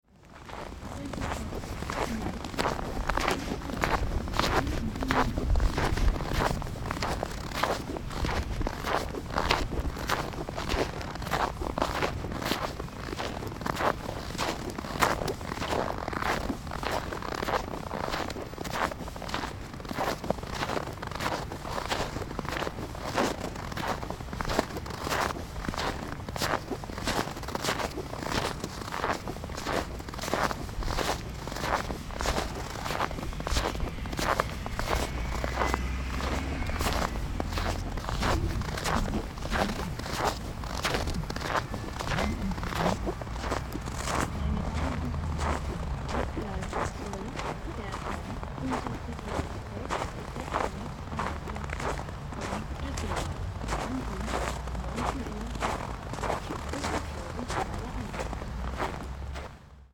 berlin hbf, approaching - berlin hbf, approaching by feet, snow
05.01.2009 19:30, approaching main station by feet, snow, -10°
Hauptbahnhof, 5 January, 19:30